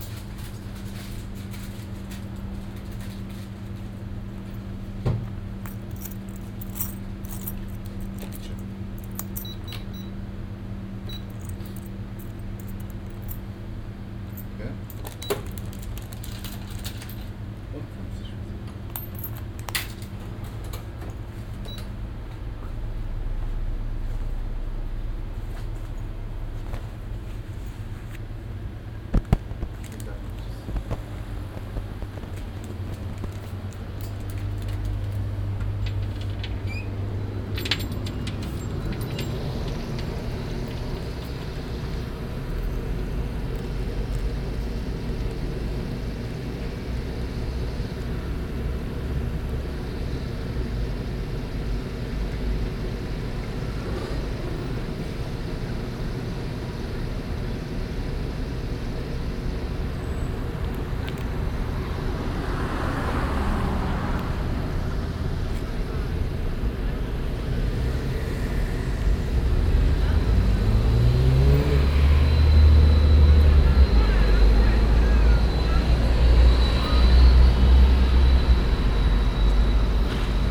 cologne, bruesseler str, ecke bismarkstr, kiosk
inside the kiosk, geldgeräusche, lüftungen der kühlschränke, aussen lüftung der klimaanlage, verkehrsgeräusche nachmittags
soundmap nrw
- social ambiences/ listen to the people - in & outdoor nearfield recordings